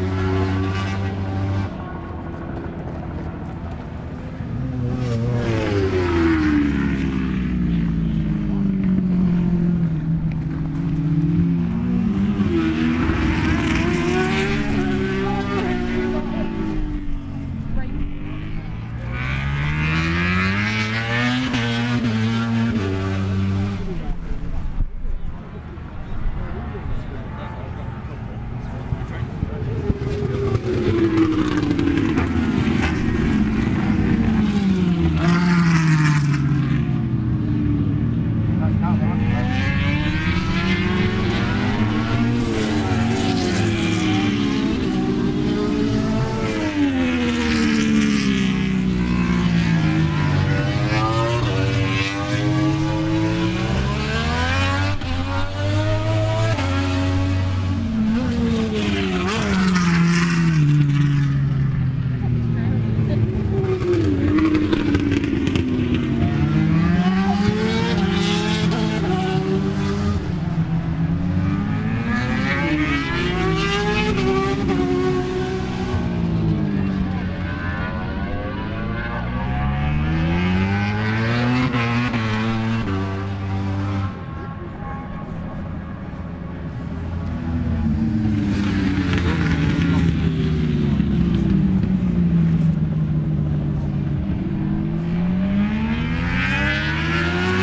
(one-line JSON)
{"title": "Donington Park Circuit, Derby, United Kingdom - British Motorcycle Grand Prix 2003 ... moto grandprix ...", "date": "2003-07-11 10:20:00", "description": "British Motorcycle Grand Prix 2003 ... Practice part two ... 990s and two strokes ... one point stereo mic to minidisk ...", "latitude": "52.83", "longitude": "-1.38", "altitude": "94", "timezone": "Europe/London"}